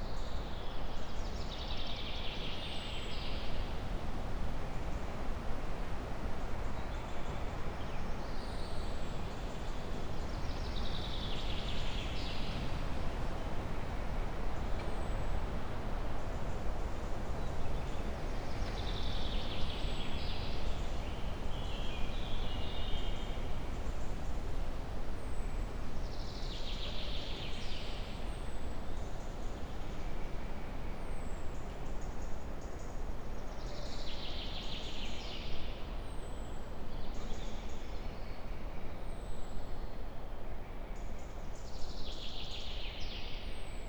ex Soviet military base, Garnison Vogelsang, forest sounds heard inside former cinema / theater
(SD702, MKH8020)
16 June 2017, 12:25